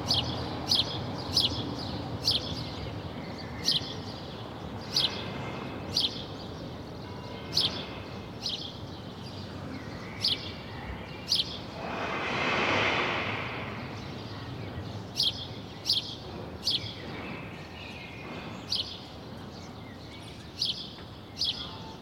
Reuterstrasse: Balcony Recordings of Public Actions - A quiet Thursday morning
The noise of cars IS more striking these days. What a quiet Thursday morning on the balcony.
Sony PCM D-100